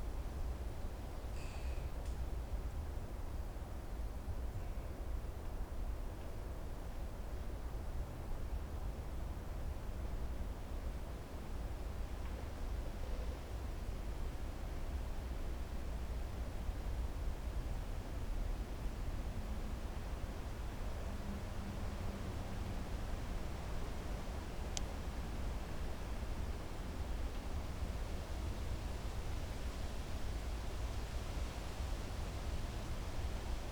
Morasko nature reserve - branch slide
branch of a fallen tree pressed against other tree. nice crackles when the three is moved by wind. (roland r-07 internal mics)